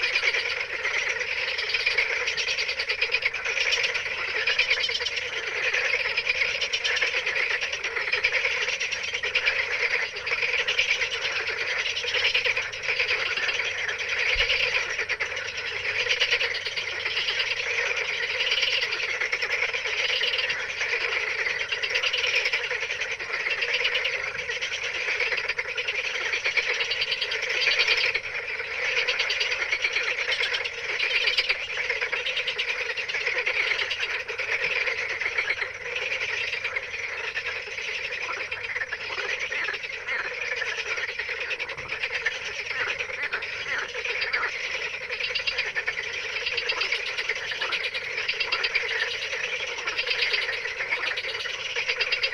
Lacara, Sithonia, Griechenland - Night frogs
night frogs in a pond directly on the sea